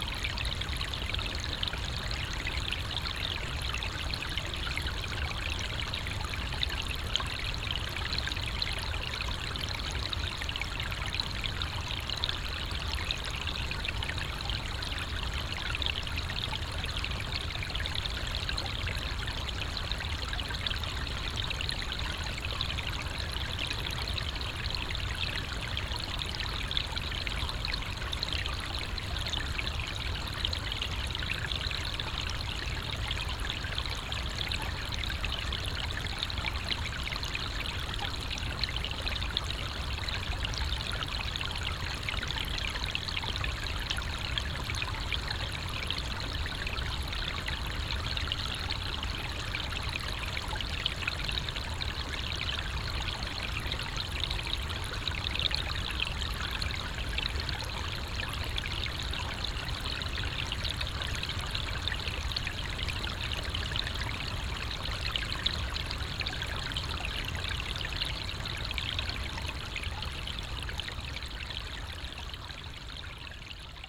Grybeliai, Lithuania, beavers dam
Underwater microphone under the frozen beavers dam
Utenos apskritis, Lietuva, 23 January 2022, 16:35